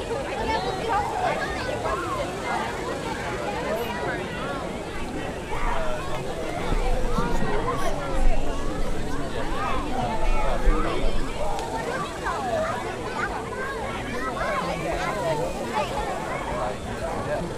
Barton Springs, Saturday Afternoon
Barton Springs Pool on a Saturday Afternoon, Field, Crowds, Leisure
24 July 2010, ~04:00